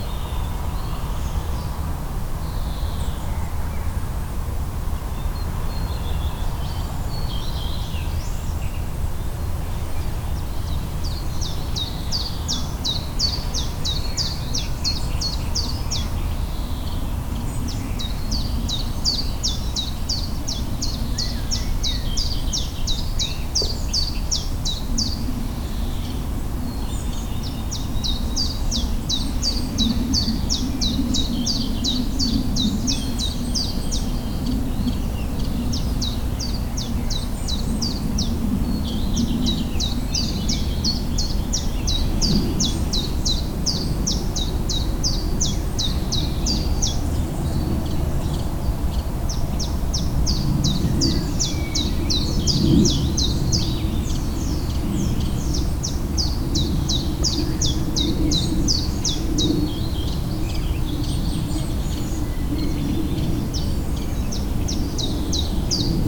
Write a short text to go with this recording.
awake of the city. this is a general soundscape of every awake, no surprise: birds.